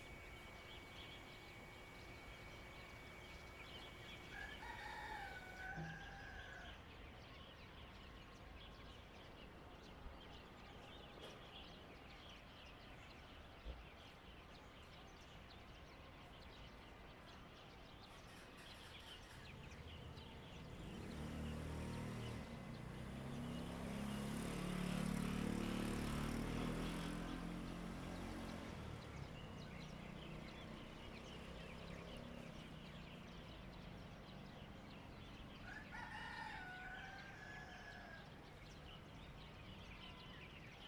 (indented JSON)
{
  "title": "雲林縣水林鄉蕃薯村 - Penthouse platform",
  "date": "2014-02-01 07:32:00",
  "description": "On the Penthouse platform, Neighbor's voice, Birdsong sound, Chicken sounds, The sound of firecrackers, Motorcycle sound, Zoom H6 M/S",
  "latitude": "23.54",
  "longitude": "120.22",
  "timezone": "Asia/Taipei"
}